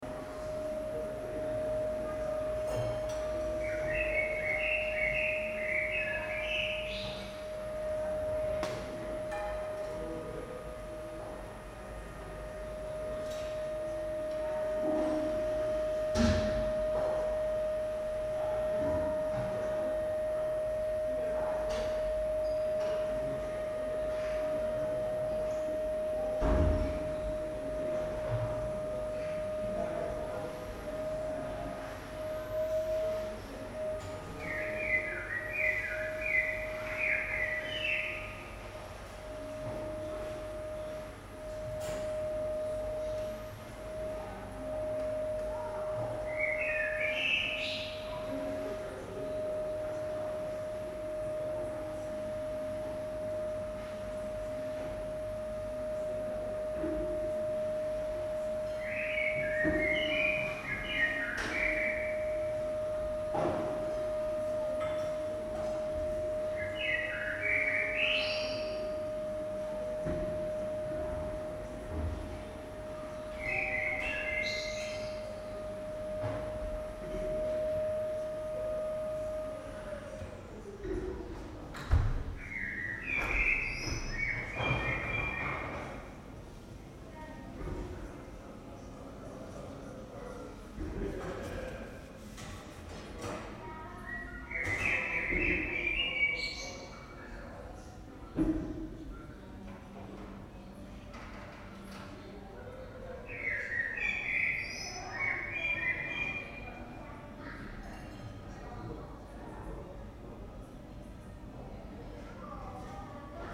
Husemannstraße, Berlin, Germany - first backyard
Backyard, day, vacuum cleaner, birds, people, children